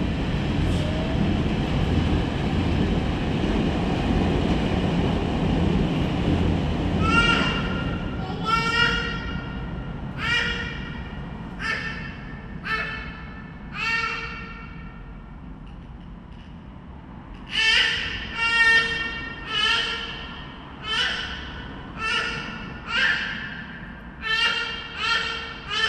Na Košince, Liben, pedestrian passage
passage under the railroad
9 May, 14:11